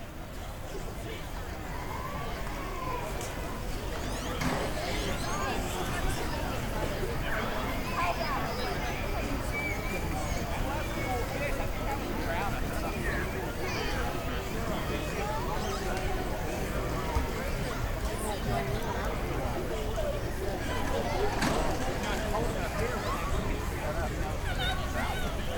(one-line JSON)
{"title": "Fun at Barton Springs, Austin, Texas - Fun at Barton Springs", "date": "2012-05-20 14:37:00", "description": "Fun with friends at Barton Springs in Zilker Park. Carefree people, swimming, diving board, distant drumming, laughter, families, splashing water.\nChurch Audio CA-14 omnis with binaural headset > Tascam DR100 MK-2", "latitude": "30.26", "longitude": "-97.77", "altitude": "145", "timezone": "America/Chicago"}